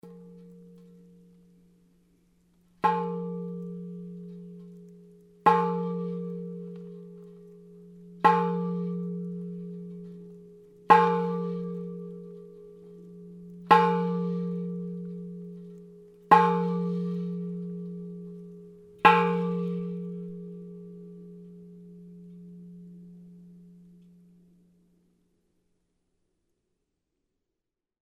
hoscheid, sound object, forest gong - hoscheid, sound object, forestgong
At the Hoscheid Klangwanderweg - sentier sonore, deep in the forest you can find this sound object by Michael Bradke entitled Wald Orgel.
Its a steel tube hanging between two trees, that can be played with a wooden panel.
Hoscheid, Klangobjekt, Waldgong
Auf dem Klangwanderweg von Hoscheid. Tief im Wald ist dieses Klangobjekt von Michael Bradke mit dem Titel Waldgong zu finden. Es ist eine gestimmte Stahlröhre, die zwischen zwei Bäumen hängt und mit einem hölzernen Stock gespielt wird.
Mehr Informationen über den Klangwanderweg von Hoscheid finden Sie unter:
Hoscheid, élément sonore, gong sylvestre
Cet objet de Michael Bradke intitulé le Gong Sylvestre se trouve sur le Sentier Sonore de Hoscheid, profondément enfoncé dans la forêt. Il se présente sous la forme d’un tube suspendu entre deux arbres que l’on fait sonner à l’aide d’un morceau de bois.
Informations supplémentaires sur le Sentier Sonore de Hoscheid disponibles ici :
Hoscheid, Luxembourg